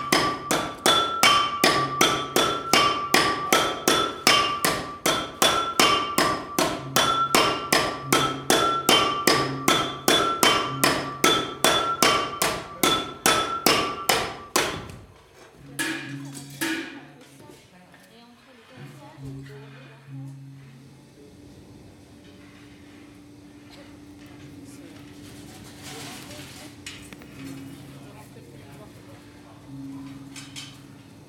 Gamelan Factory - Solo, Laban, Kec. Mojolaban, Kabupaten Sukoharjo, Jawa Tengah 57554, Indonésie - Gamelan Forge
Solonese workers in a Gamelan Forge - grinding, tuning and hammering Gongs.